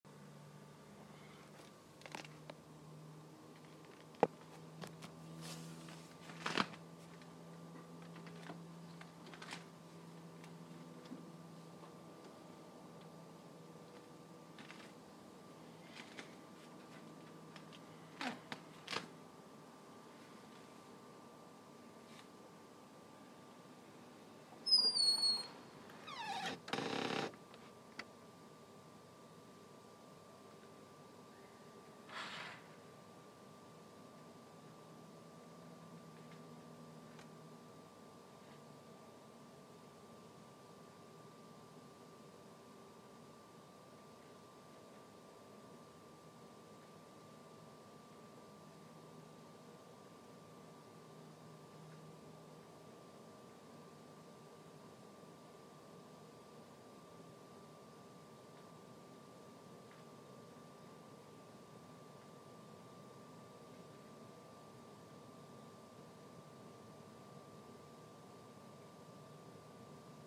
Bylo půl na půlnoc a bylo to krásné
Jihomoravský kraj, Jihovýchod, Česká republika, 10 April 2020, 23:30